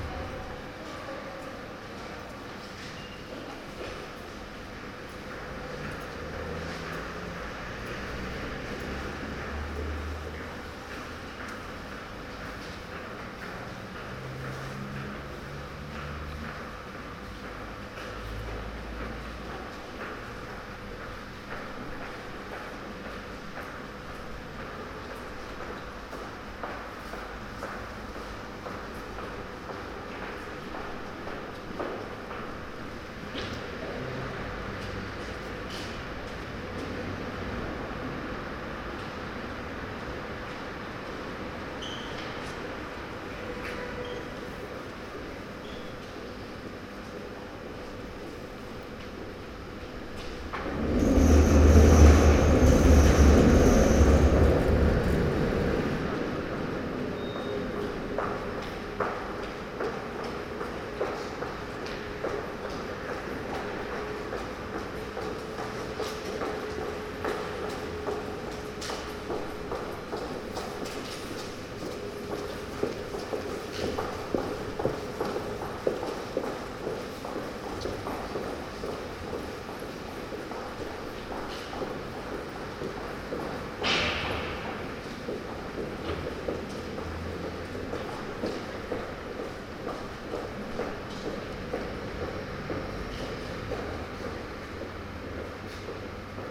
przejscie podziemne, ul. Kilinskiego, Lodz
autor / author: Lukasz Cieslak